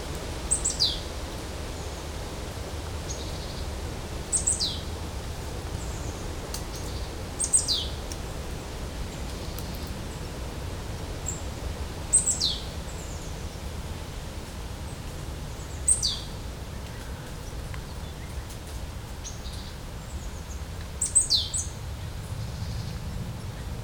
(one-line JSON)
{"title": "Chaumont-Gistoux, Belgique - The forest", "date": "2016-09-10 11:25:00", "description": "The quiet forest sounds.", "latitude": "50.69", "longitude": "4.65", "altitude": "113", "timezone": "Europe/Brussels"}